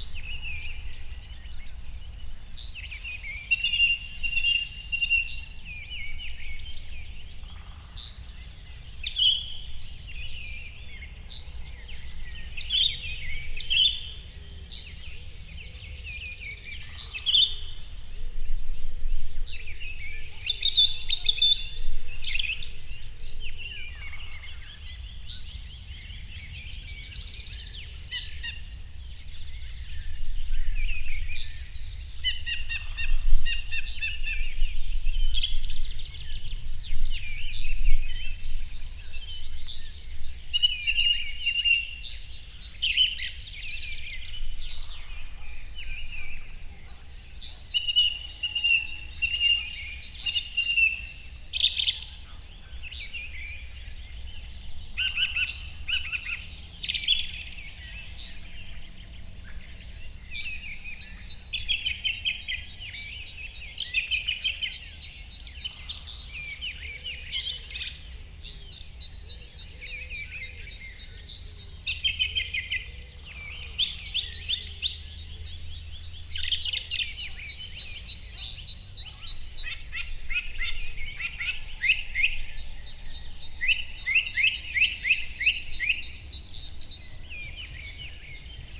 {"title": "Stružinec, Jistebnice, Česko - morning birdscape in the fields", "date": "2022-03-27 08:15:00", "description": "open hilly landscape: fields, and pastures with scattered vegetation; small cottage nearby with occasional traffic, otherwise pretty quiet\nrecording equipment: Zoom f8n with Audio-Technica BP4025 stereo mic", "latitude": "49.51", "longitude": "14.54", "altitude": "618", "timezone": "Europe/Prague"}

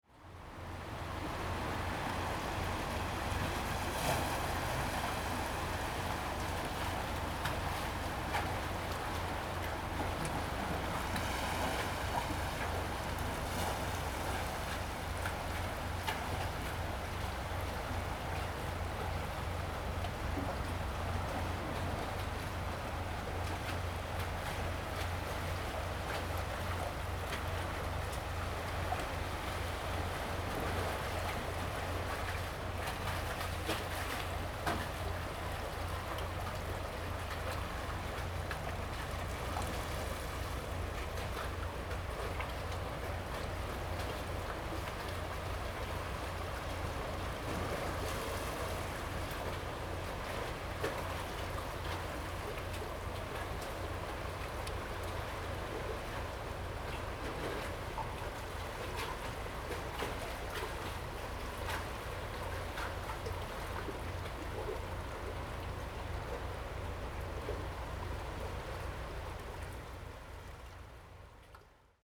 {"title": "番仔澳酋長岩公園, Taiwan - On the coast", "date": "2016-08-04 12:48:00", "description": "Sound of the waves, On the coast\nZoom H2n MS+XY +Sptial Audio", "latitude": "25.14", "longitude": "121.82", "timezone": "Asia/Taipei"}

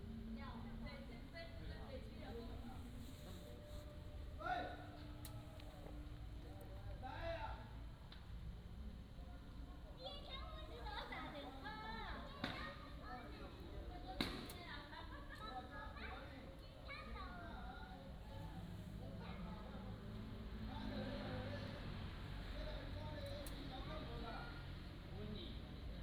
{"title": "三隆宮, Hsiao Liouciou Island - In the square", "date": "2014-11-01 19:39:00", "description": "In the square, in front of the temple", "latitude": "22.35", "longitude": "120.38", "altitude": "38", "timezone": "Asia/Taipei"}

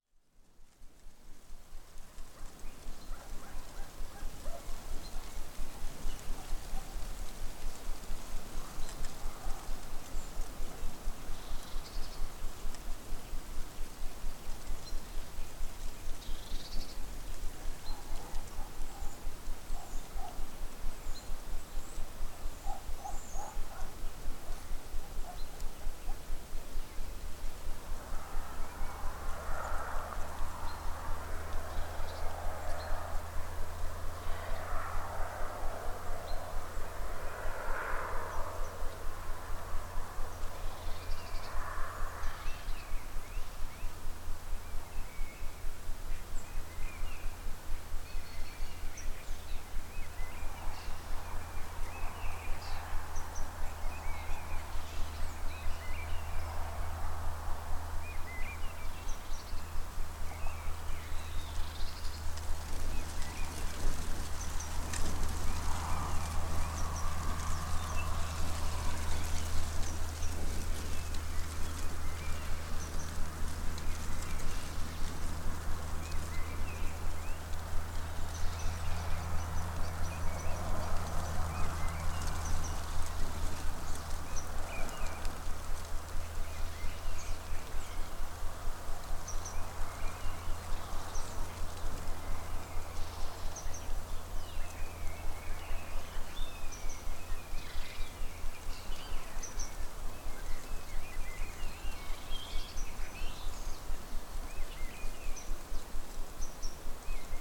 {"title": "Šlavantai, Lithuania - Rustling leaves, ambience", "date": "2021-03-19 15:00:00", "description": "Gentle ambience, sounds of leaves rustling, bird calls and occasional reverberations from cars passing by on the other side of the lake. Recorded with ZOOM H5.", "latitude": "54.16", "longitude": "23.65", "altitude": "130", "timezone": "Europe/Vilnius"}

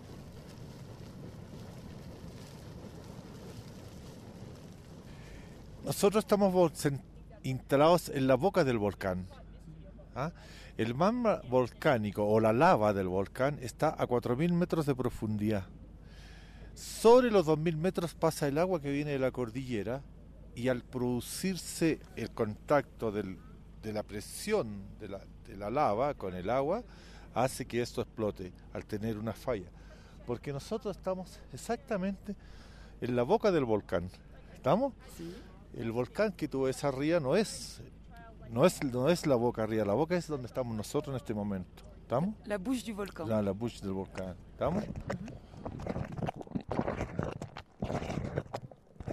{"title": "Province d'El Loa, Région d'Antofagasta, Chili - Geysers", "date": "2008-12-20 08:13:00", "description": "Geysers, with explanantions from a guid", "latitude": "-22.87", "longitude": "-68.51", "altitude": "3286", "timezone": "America/Santiago"}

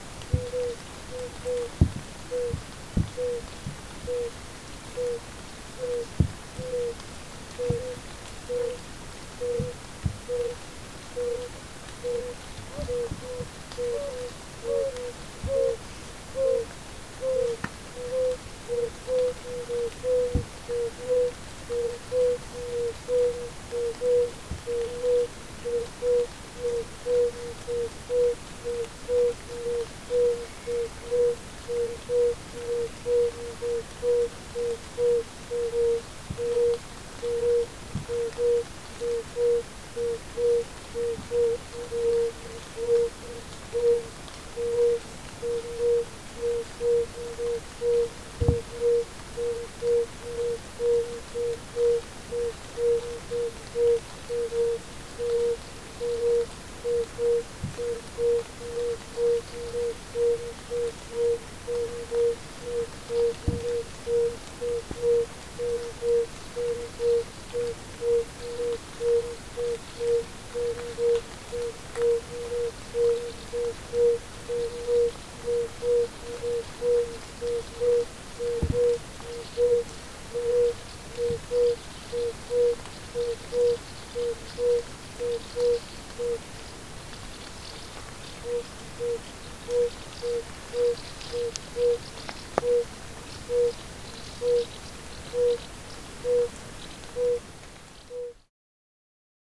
{"title": "Unnamed Road, Aminteo, Greece - Its nice to hear the freedom", "date": "2021-07-19 20:45:00", "description": "Night walk in the woods after the rain. Record by Alexandros Hadjitimotheou", "latitude": "40.64", "longitude": "21.48", "altitude": "1435", "timezone": "Europe/Athens"}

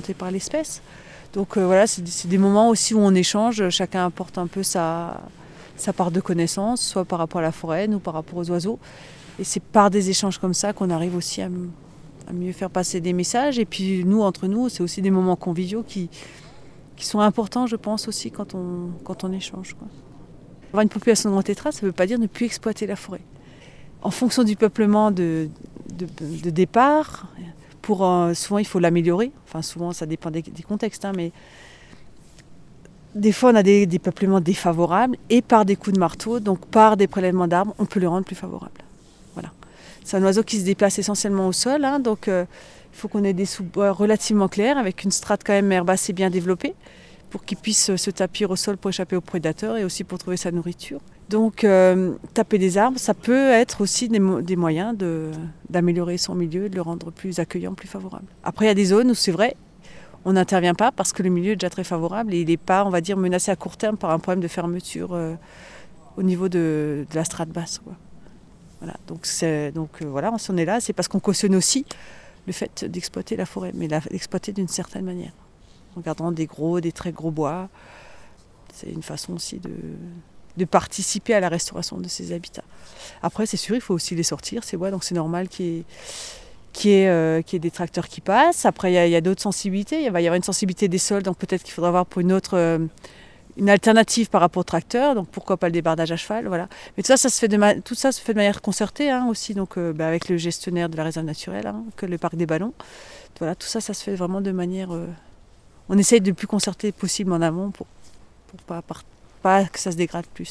{"title": "Groupe Tétras Vosges - Réserve Naturelle du Massif du Ventron, Cornimont France", "date": "2012-10-25 09:20:00", "description": "Mme Françoise PREISS, chargée de missions scientifiques du Groupe Tétras Vosges.\nLe GTV assure le suivi scientifique des populations de tétraonidés et de leurs habitats sur l'ensemble du massif vosgien ( 7 départements et 3 régions).\nUne centaine de membres bénévoles participent chaque année au suivi.\nLe suivi des populations requiert un bon sens du terrain et une motivation qui soient à même de garantir l'éthique du travail accompli. Pour pouvoir être validés et exploités les résultats doivent être formalisés et des fiches techniques correspondantes ont été mises au point par la commission technique du GTV.\nLe massif vosgien est découpé en 10 secteurs pour lesquels un coordinateur local est responsable du bon fonctionnement du dispositif.", "latitude": "47.97", "longitude": "6.91", "altitude": "977", "timezone": "Europe/Paris"}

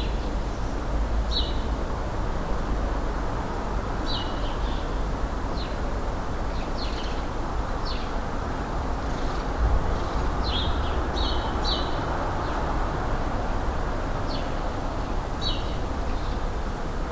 {"title": "Ein Tag an meinem Fenster - 2020-03-28", "date": "2020-03-28 14:02:00", "latitude": "48.61", "longitude": "9.84", "altitude": "467", "timezone": "Europe/Berlin"}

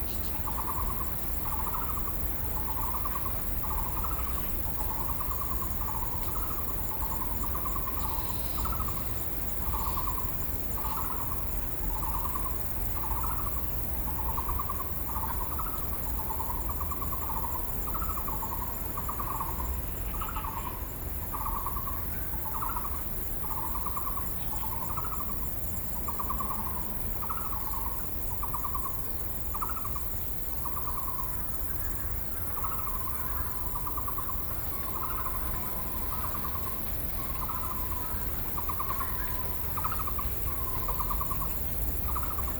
{"title": "義學坑自然生態步道, Taishan Dist. - Ecological Park", "date": "2012-07-08 15:07:00", "description": "Ecological Park, Bird calls\nZoom H4n XY+ Rode NT4", "latitude": "25.05", "longitude": "121.42", "altitude": "78", "timezone": "Asia/Taipei"}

